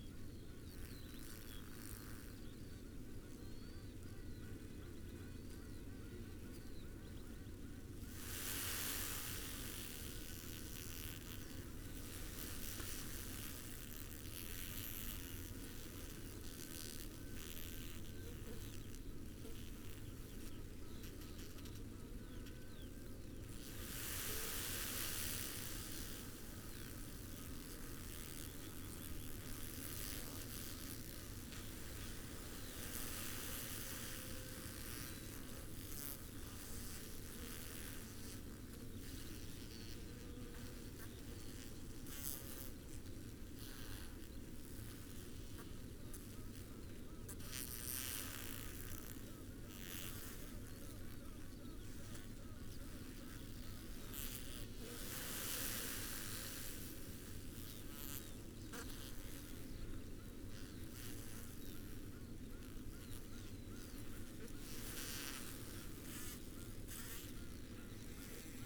Green Ln, Malton, UK - bee swarm ...
bee swarm ... xlr SASS on floor to Zoom F6 ... this according to a local bee keeper was a swarm ... the bees were smeared on the outside of the hive ... he said the queen would be in the middle of the mass ... they had swarmed as the hive might have been too small for the colony ..? the combs were full ..? the old queen had died ..? the new queen had killed her siblings ... would then having a mating flight before being led to a new site ... the first three minutes have the swarm buzzing in waves ... before general bee swarm buzzing ... some sounds are specific to the queens ... called quacking and tooting ... one sound is to quiet the swarm so the other queen can be located and stung to death ...
England, United Kingdom, 8 July 2020, 07:00